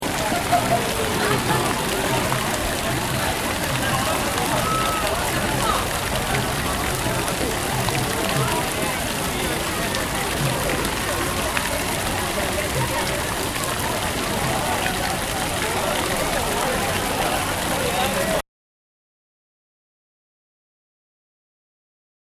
Albert Park Centre
Sounds of a fountain as auckland uni students celebrate their graduation